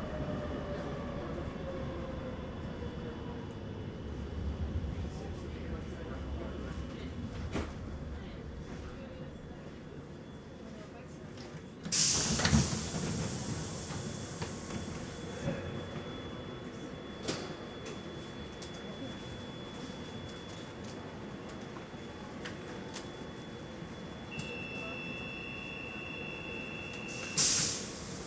Then going out at Louise.
Tech Note : Ambeo Smart Headset binaural → iPhone, listen with headphones.
Brussel-Hoofdstad - Bruxelles-Capitale, Région de Bruxelles-Capitale - Brussels Hoofdstedelijk Gewest, België / Belgique / Belgien, 24 February 2022, 23:49